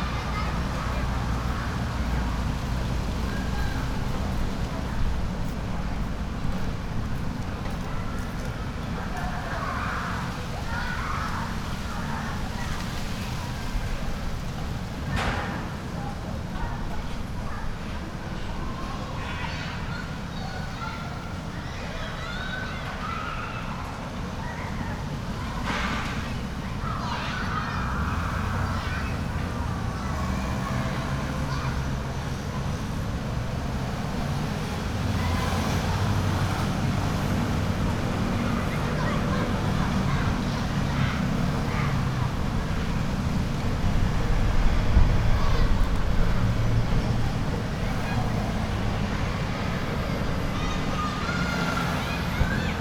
Cianjhen District, Kaohsiung - Primary side
Primary side, Sony PCM D50
高雄市 (Kaohsiung City), 中華民國, 5 April 2012, ~14:00